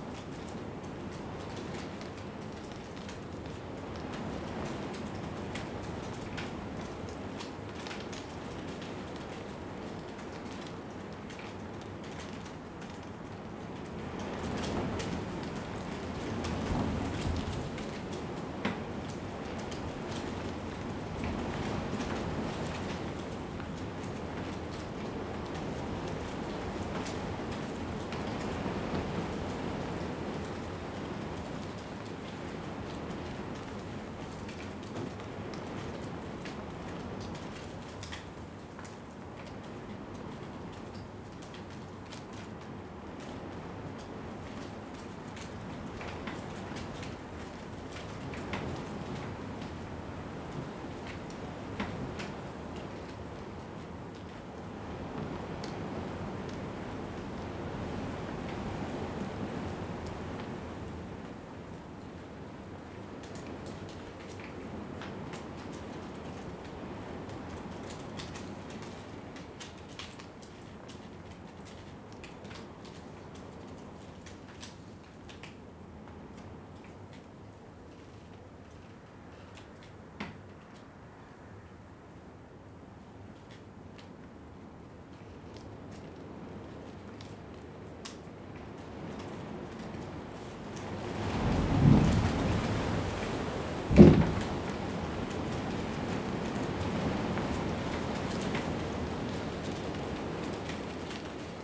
Ackworth, West Yorkshire, UK - Hiding from the wind
Sheltering from the wind in a storage container, you can hear the wind hitting against the side of the container, strips of plastic whipping around in the wind, and sometimes the sound of dry grass.
(Zoom H4n)